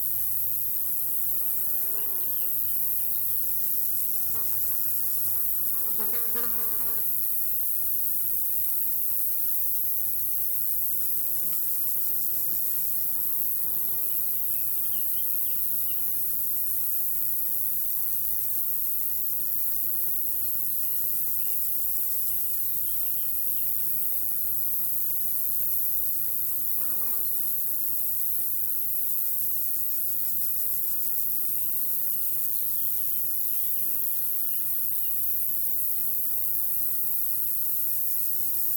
Ontex, France - Prairie stridulante
Une prairie aux hautes herbes sèches non cultivée. Symphonie de criquets et sauterelles.